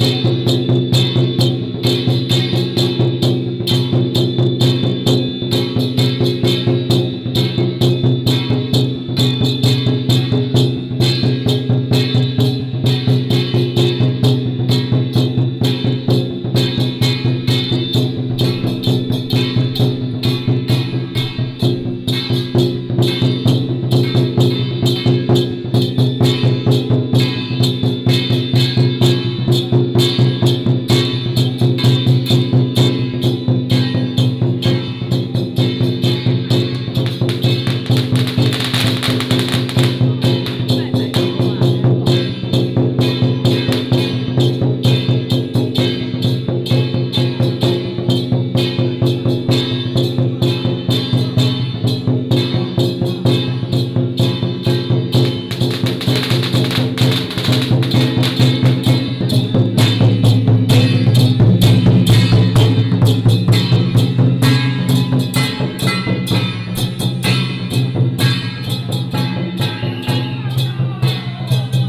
Pilgrimage group, In the temple